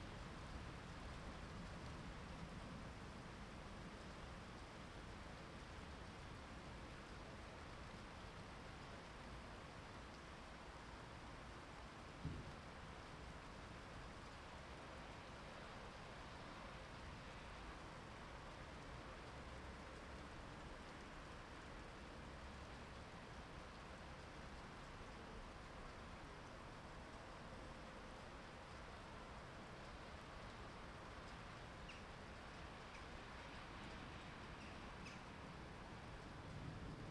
Andrzeja Kmicica, Wrocław, Poland - Thunderstorm Over Wroclaw
Thunderstorm Recorded over Wroclaw; recorded using Zoom H3-VR sitting on a window sill, hastily put there before the storm left! A good hour or so of recording, sadly clipped in places due to the volume of the storm. Distant sound of kestrels, and city ambience.